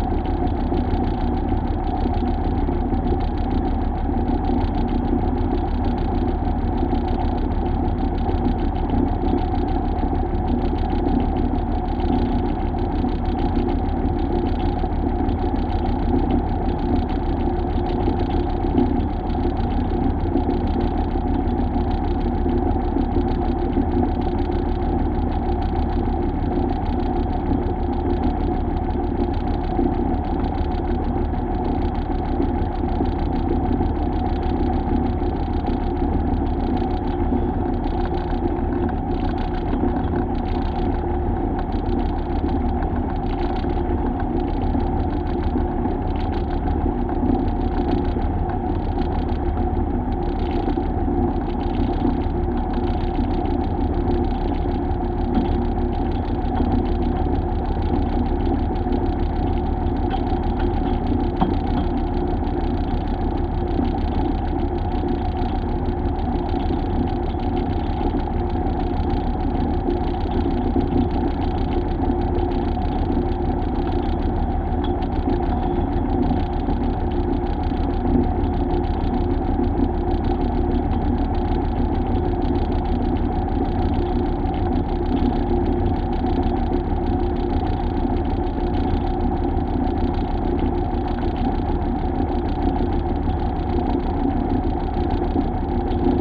Cronulla, NSW, Australia - Ferry From Bundeena To Cronulla, Contact Microphones On The Metal Rail
Two JrF contact microphones (c-series) to a Tascam DR-680.